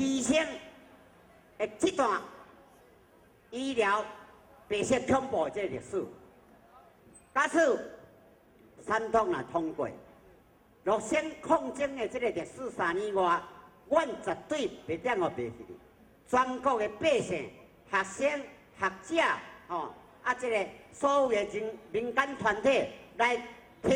Legislative Yuan, Taiwan - Protest march
Protest march, Sony ECM-MS907, Sony Hi-MD MZ-RH1
台北市 (Taipei City), 中華民國, 12 December 2007